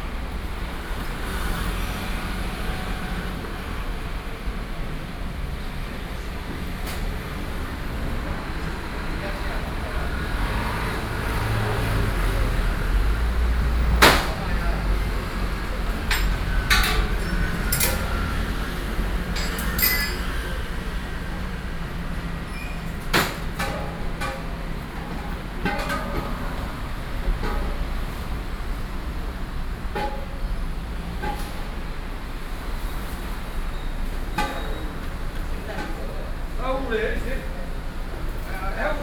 Ln., Sec., Shipai Rd., Beitou Dist., Taipei City - Discharge
Discharge-Gas tank, Environmental Noise and Traffic Noise, Sony PCM D50 + Soundman OKM II
台北市 (Taipei City), 中華民國